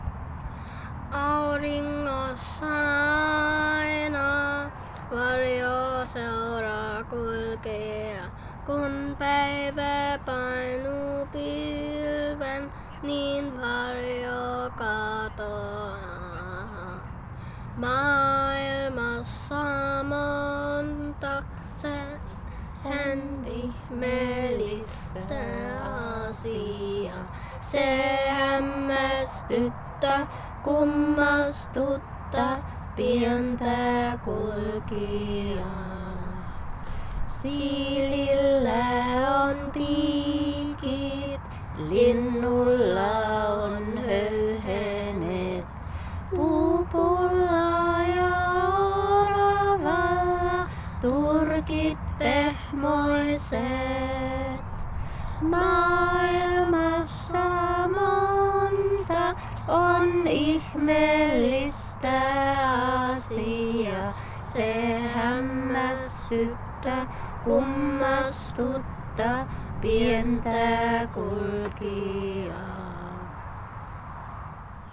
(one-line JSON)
{"title": "Gießen, Deutschland - Finnish folk song at intercultural garden", "date": "2014-05-03 17:10:00", "description": "Gibran, 4 years old, sings a Finnish folk song assisted by his mother, Virpi Nurmi. Location is the intercultural garden near the old Funkturm in Gießen. In the backdrop the buzz of the 485 road. Recorded with a ZoomH4N", "latitude": "50.59", "longitude": "8.71", "altitude": "183", "timezone": "Europe/Berlin"}